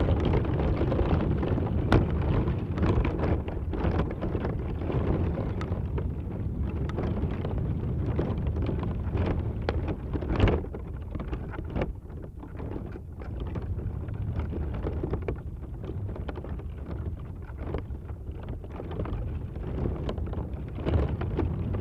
Vyžuonos, Lithuania, dried creeper plant
Contact microphone on hanging dried creeper plant
Utenos apskritis, Lietuva